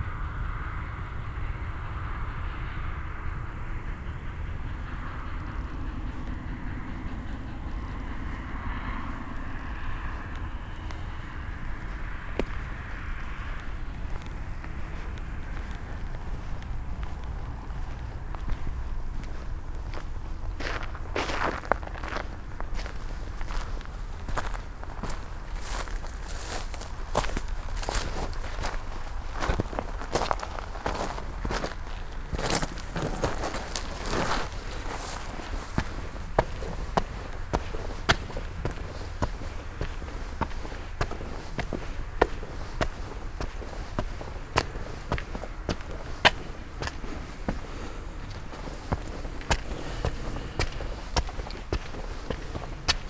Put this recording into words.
short walk on the new A4 motorway, which will be shifted south soon because of the extension of the Hambach lignite opencast mine. a heavy duty train is passing nearby, behind an earth wall. the coal train line seems already functional. (Sony PCM D50, DPA4060)